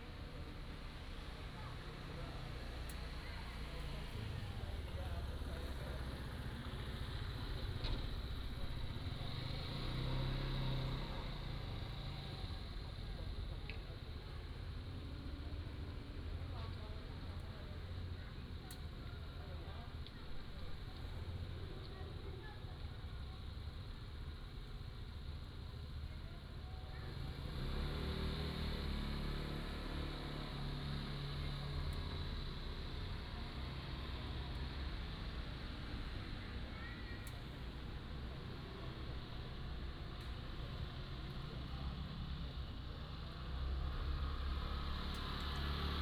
三隆宮, Hsiao Liouciou Island - In the square
In the square, in front of the temple